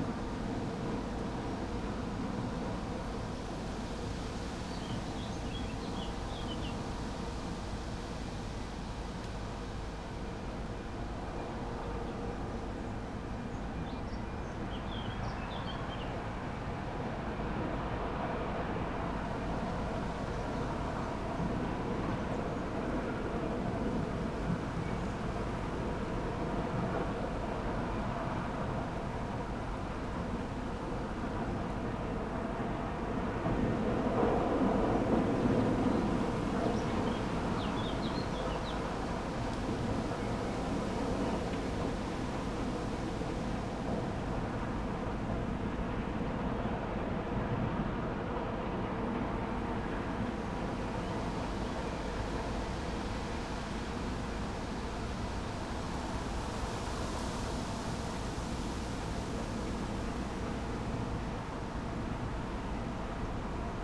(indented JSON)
{
  "title": "Rte de Belval, Esch-sur-Alzette, Luxemburg - wind, machine sounds",
  "date": "2022-05-11 11:40:00",
  "description": "Esch-sur-Alzette, machine sounds from the nearby Acelor Mittal plant premises, fresh wind in trees\n(Sony PC D50, Primo EM172)",
  "latitude": "49.49",
  "longitude": "5.97",
  "altitude": "298",
  "timezone": "Europe/Luxembourg"
}